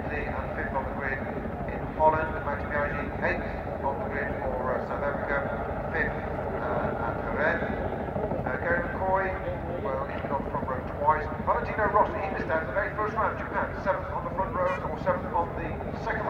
Castle Donington, UK - british motorcycling grand prix 2002 ... qualifying ...
british motorcycle grand prix 2002 ... qualifying ... single point mic to sony minidisk ... commentary ... time approximate ...